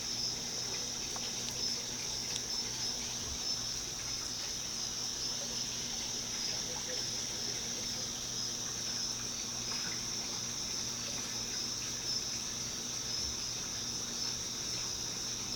Sudano Court, Holly Springs, NC, USA - Ambient Noise in Backyard
Recorded outside a friend's house. Some talking can be heard indoors. Crickets and water can be heard, mostly.